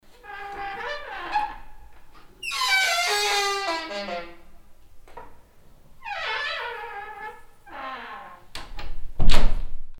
heinerscheid, church, door
The sound of the queeking wooden door that is leading to the church bell tower.
Heinerscheid, Kirche, Tür
Das Geräusch der quietschenden Holztür, die zum Glockenturm der Kirche führt.
Heinerscheid, église, portail
Le bruit de grincement de la porte en bois qui mène dans le clocher de l’église.
Projekt - Klangraum Our - topographic field recordings, sound objects and social ambiences